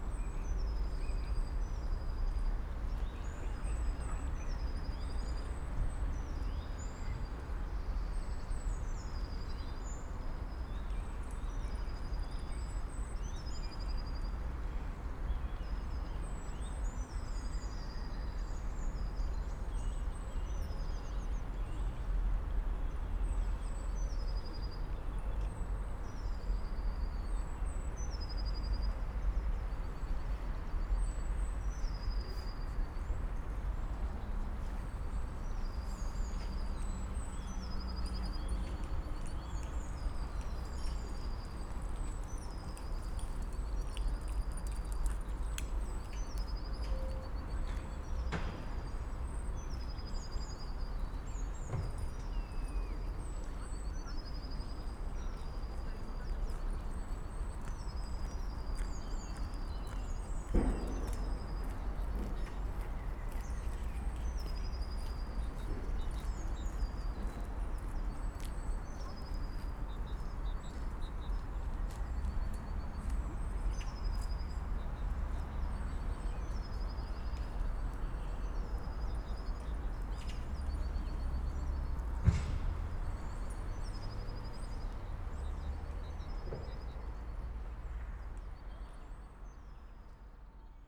Walter-Friedrich-Straße, Berlin-Buch - river panke, morning ambience
morning ambience near river Panke (inaudible), see
(Sony PCM D50, DPA4060)